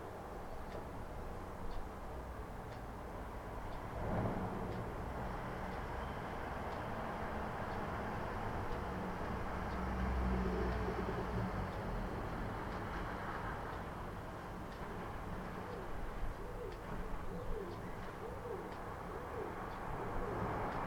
Hackney, UK - early morning on housing estate
London, Greater London, UK, 14 March 2012, ~07:00